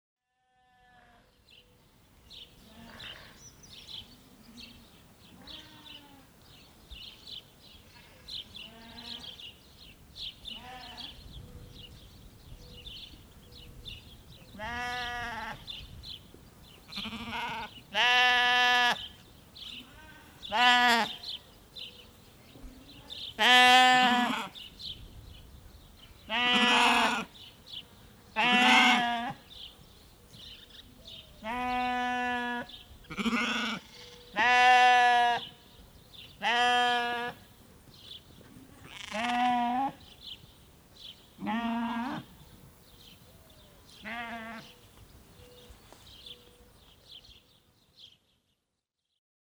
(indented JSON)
{
  "title": "marnach, farmstead with sheeps",
  "date": "2011-09-13 18:03:00",
  "description": "On the backyard of a small farmstead. A small group of sheeps standing close together blaaing plus the sound of the vivid sparrows from a tree bush nearby.\nMarnach, Bauernhof mit Schafen\nAuf dem Hinterhof eines kleinen Bauernhofes. Eine kleine Gruppe von Schafen steht eng beieinander und blökt, sowie das Geräusch von den lebhaften Spatzen auf einem Baum in der Nähe.\nMarnach, ferme avec moutons\nDans la cour d’une petite ferme. Un petit groupe compact de moutons bêlant, plus le son de moineaux agités dans un arbre proche.",
  "latitude": "50.05",
  "longitude": "6.06",
  "altitude": "521",
  "timezone": "Europe/Luxembourg"
}